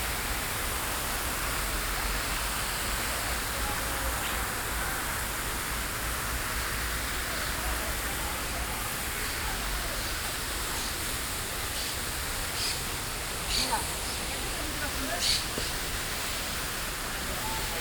Parque de la Ciudadela, Passeig de Picasso, Barcelona, Spain - Parc de la Ciutadella Fountain
Recording of water made during World Listening Day.
18 July 2015, 12:43